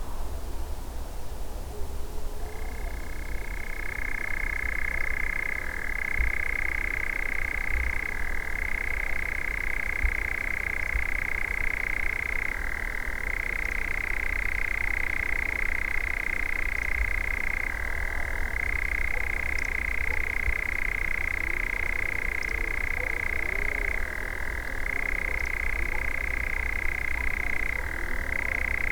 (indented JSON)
{
  "title": "Gajowa, Sasino, Polska - European nightjar",
  "date": "2019-06-19 22:18:00",
  "description": "a late evening recording of a European nightjar (or common goatsucker). It was flying from one grove to another and continuing its call. away in the distance someone tormenting a chainsaw. dogs barking (roland r-07)",
  "latitude": "54.76",
  "longitude": "17.73",
  "altitude": "40",
  "timezone": "Europe/Warsaw"
}